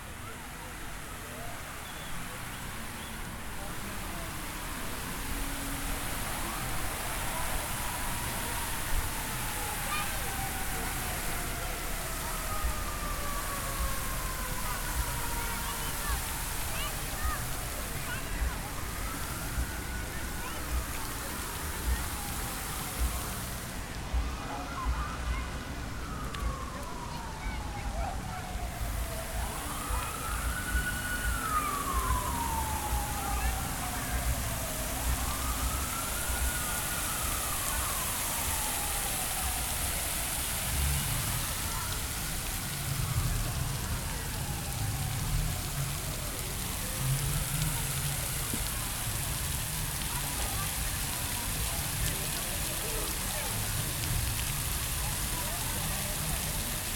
Millennium Park, Chicago, IL, USA - Crown Fountain - Street Level, Day Time
Recorded with Zoom H4N at the Crown Fountain. It was 82 °F, and children were playing in the fountain.
2 June 2017